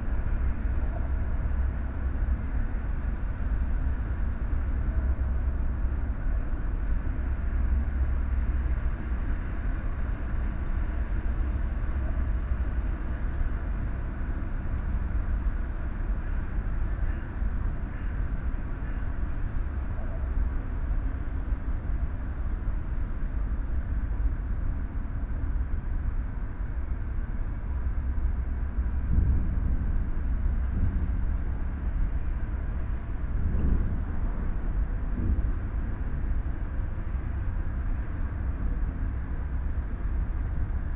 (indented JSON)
{
  "title": "Mühlauhafen, Mannheim, Deutschland - Saturday morning in the harbour",
  "date": "2020-09-12 07:15:00",
  "description": "A comparatively quit morning in the container harbour. Large container-harbour crane and associated machinery moving on the opposite side of the harbour basin. Containers being hauled on ships.The crane moving up and down along the quay. To the right motor vehicles passing over a bridge. Calls of Common Black-headed Gulls (Chroicocephalus ridibundus) can be heard calling as they fly around in the harbour. At 4 min in the recording the call of a Grey Heron (Ardea cinerea) and at 4 min 05 sec, the flight call of a migrating Tree Pipit (Anthus trivialis). Recorded with a Sound Devices 702 field recorder and a modified Crown - SASS setup incorporating two Sennheiser mkh 20",
  "latitude": "49.49",
  "longitude": "8.45",
  "altitude": "92",
  "timezone": "Europe/Berlin"
}